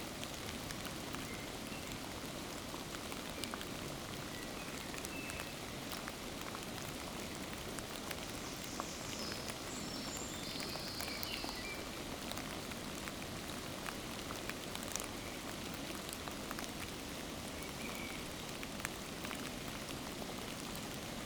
Mont-Saint-Guibert, Belgique - In the cemetery
We are here in a kind of special place, as this is an abandoned monks cemetery. On the satelitte view, you can't distinguish it because of the abondant trees. Monks were all working in the school just near (south-west). It was a long time ago. Long... Not so far as numerous people knew them. Today, there's no more anybody to maintain this place. That's sad because there are very-very-very few people knowing this is existing ; simply no more than this, in fact it's sad to say it's an oblivion. Surprisingly, it's also a motivating place as nature is completely free to grow and yell. I was wishing to speak, somewere, about this forgotten monks, without judging their life and their teaching, just because solely everybody merit memory. This place is recorded below a constant quiet rain, mingled with the unceasing trains and frightful planes. A very-very small piece of peace in the midst of life.
Court-St.-Étienne, Belgium